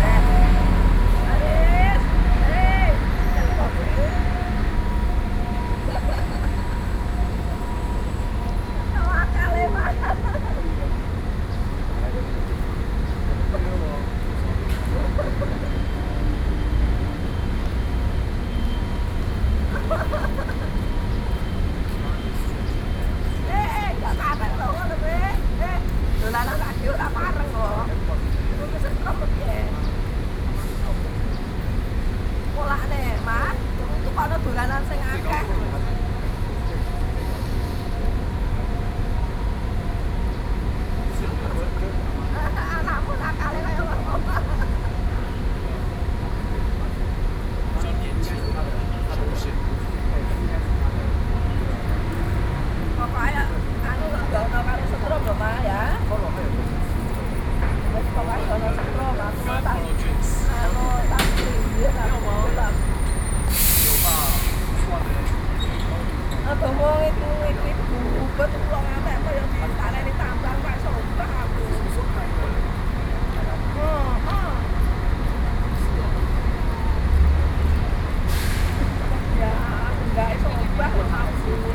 龜山區公西里, Taoyuan City - Outside the hospital
Outside the hospital
Sony PCM D50+ Soundman OKM II
Taoyuan City, Taiwan